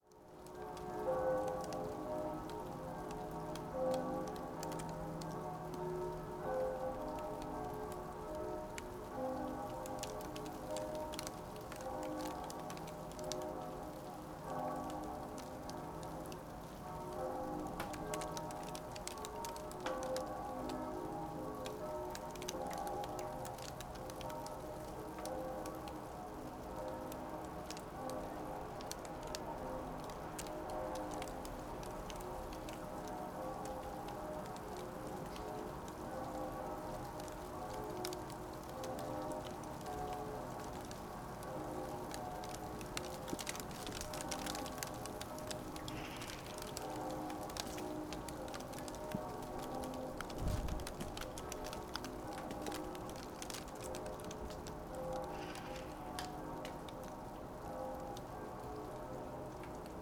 Berlin Bürknerstr., backyard window - icy rain and churchbells
temperatures around zero, ice rain starting, christmas church bells
Berlin, Germany, 24 December 2010, ~15:00